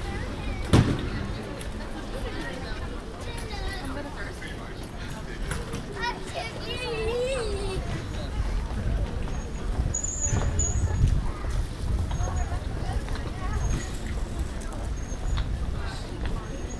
A walk through the city - A walk through the city The Hague (part 8)